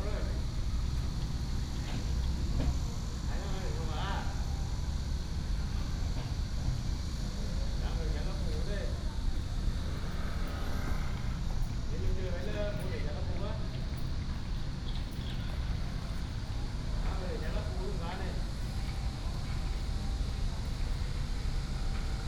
At the entrance to the mall, Cicadas, Traffic sound, trolley, Phone sound